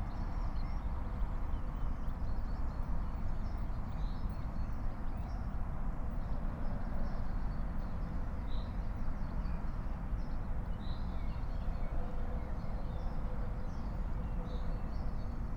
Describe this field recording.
19:48 Berlin Buch, Lietzengraben - wetland ambience